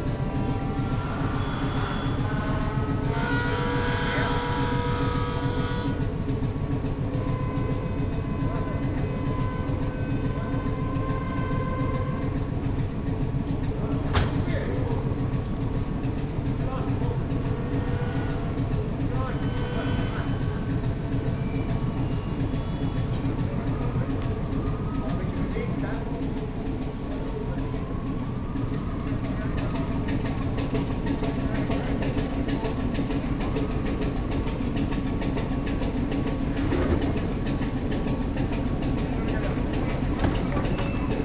Kantinestraat, Oostende, Belgien - Crangon in Ostend sea lock
Museum trawler Crangon passing through the sea lock in Ostend on its way to the fishing harbour. The whining noise in the second half is the hydraulics of the lock's gate opening. Note the wonderful jazz of the Crangon's 3-cylinder ABC diesel engine. It don't mean a thing if it ain't got that swing... :-)
Zoom H4n, built-in microphones
Vlaanderen, België - Belgique - Belgien, 29 September 2014, ~18:00